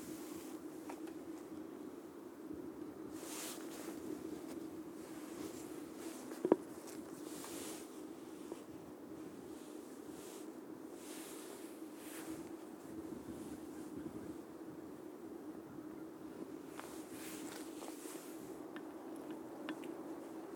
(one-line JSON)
{"title": "Hattem, The Netherlands - Railwaybridge Zwolle", "date": "2013-04-14 21:13:00", "description": "field recording from the new railway bridge", "latitude": "52.49", "longitude": "6.06", "altitude": "4", "timezone": "Europe/Amsterdam"}